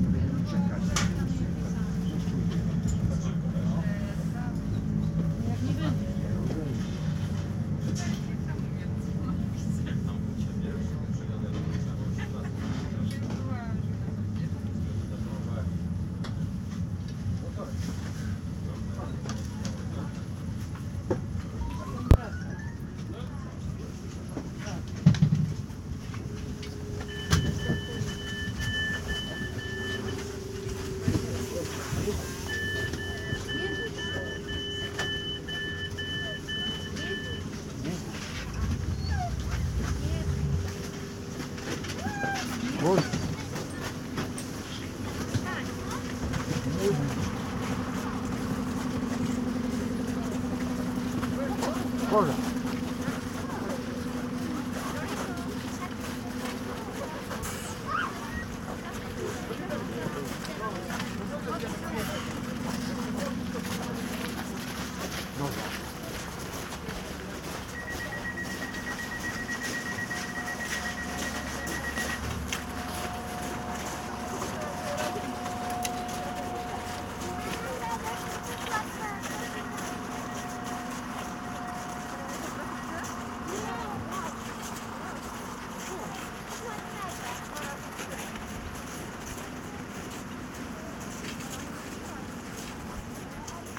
Szklarska Poręba, Poland - (896) Train ride
Binaural recording of a train ride from Szklarska Poręba Huta -> Szklarska Poręba Górna.
Recorded with DPA 4560 on Sound Devices MixPre-6 II.
17 February 2022, 14:15